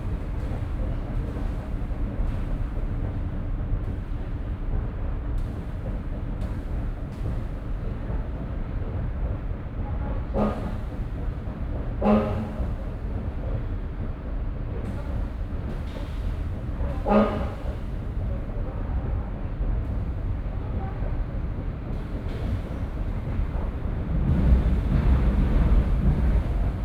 Ostviertel, Essen, Deutschland - essen, rathaus, subway station
An der U- Bahnhaltestelle Essen Rathaus. Einfahrt und Abfahrt eines Zuges.
Projekt - Stadtklang//: Hörorte - topographic field recordings and social ambiences